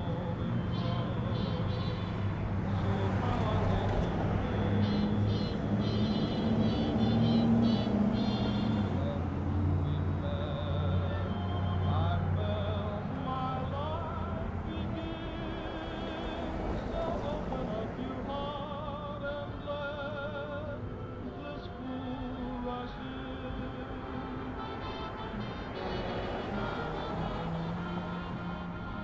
N Broadway, Peru, IN, USA - Saturday evening downtown, Peru, IN, USA
Saturday evening around 10:30 pm in Peru, Indiana, USA. Vehicles cruising down Broadway St., accompanied by music coming from a speaker mounted in the downtown area.
18 July 2020, 22:30